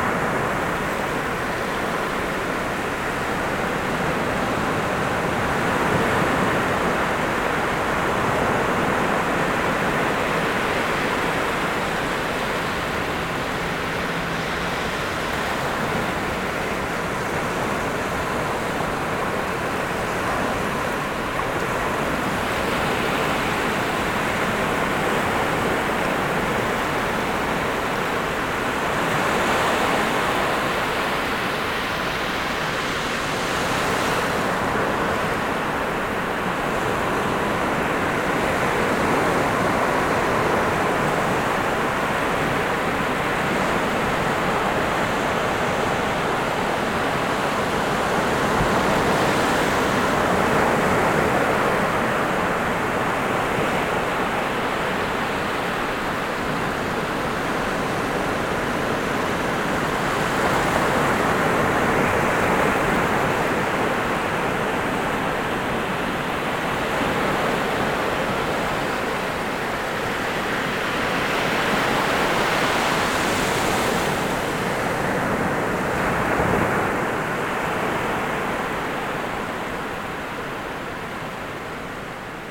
Zandvoort-Aan-Zee, Nederlands - The sea
The sea at Strandreservaat Noordzand.